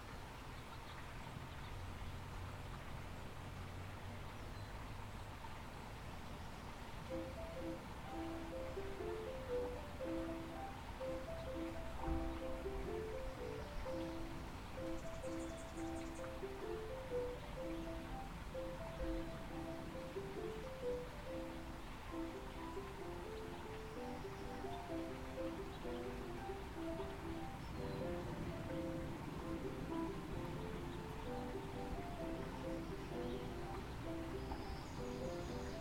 Olive Ave, San Rafael, CA, USA - piano lessons dominican college
recording taken on dominican university's campus, right outside the music building. there is a small creek close by.